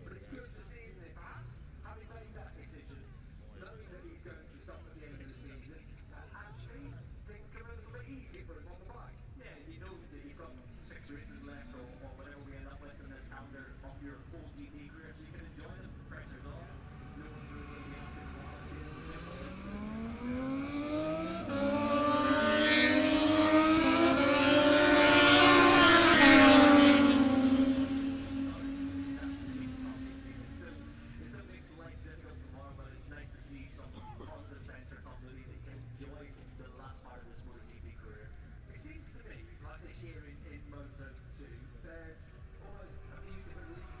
Silverstone Circuit, Towcester, UK - british motorcycle grand prix ... 2021
moto two free practice three ... copse corner ... dpa 4060s to MixPre3 ...
East Midlands, England, United Kingdom, August 2021